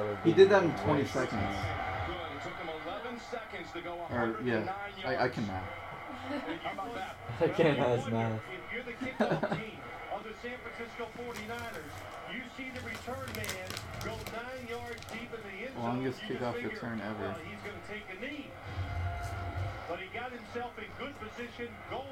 Qualla Dr. Boulder CO - Iono